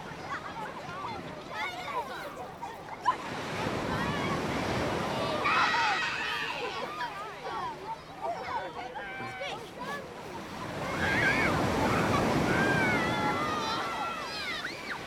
sat on a beach - recorded on Burton Bradstock beach in Dorset. Near to waves crashing onto beach with kids screaming and laughing, and a little tears.
4 August 2011, ~15:00